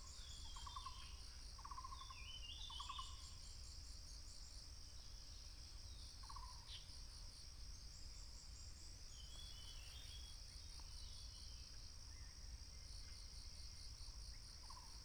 Pasture Yen Family, 埔里鎮桃米里 - Bird sounds
Bird sounds
Binaural recordings
Sony PCM D100+ Soundman OKM II
28 April 2016, 07:32